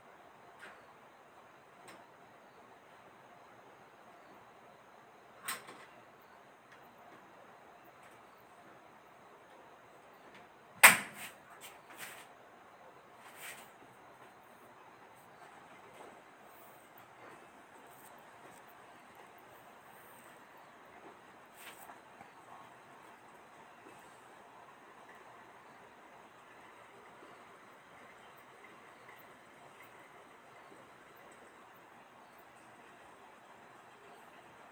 224台灣新北市瑞芳區大埔路錢龍新城 - Short sound of Taiwan Whistling Thrush
Place:
Ruifang, a place surrounded by natural enviroment.
Recording:
Taiwan Whistling Thrush's sound mainly.
Situation:
Early at morning, before sunrise.
Techniques:
Realme narzo A50
臺灣